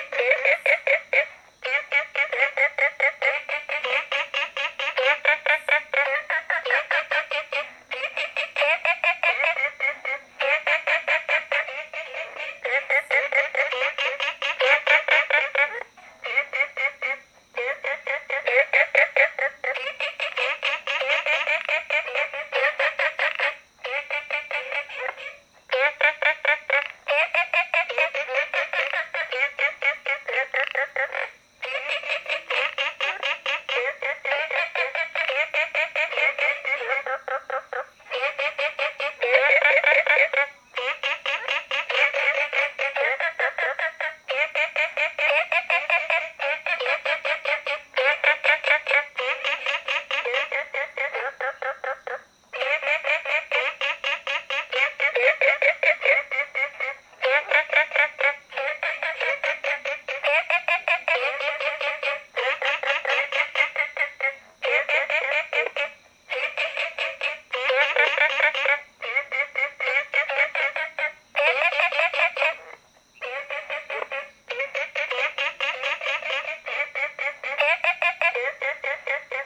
綠屋民宿, 桃米里 Taiwan - Frogs chirping
Frogs chirping, Ecological pool
Zoom H2n MS+XY